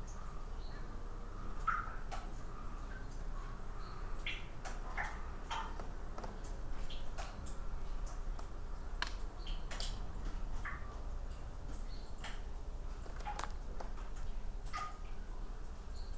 Pačkėnai, Lithuania, abandoned building
Now abandoned former cultural centre
Utenos rajono savivaldybė, Utenos apskritis, Lietuva, 25 March